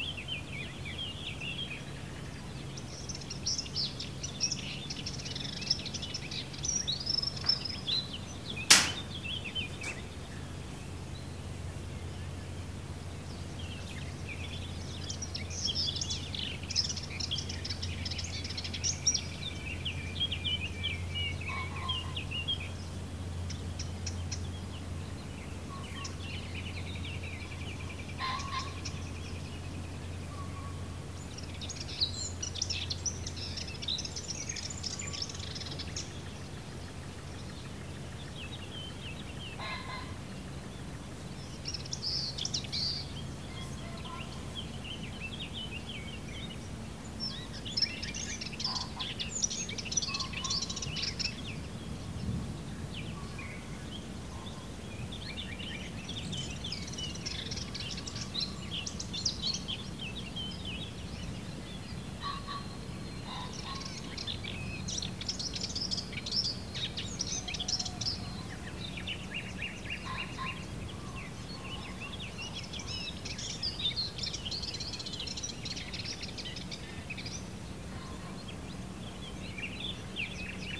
Mali pasman, Mali Pašman, Croatia - birds
recorded on Sunday morning, at dawn, at the International Dawn Chorus Day, The Dawn Chorus is the song of birds at around sunrise...
3 May 2020, ~06:00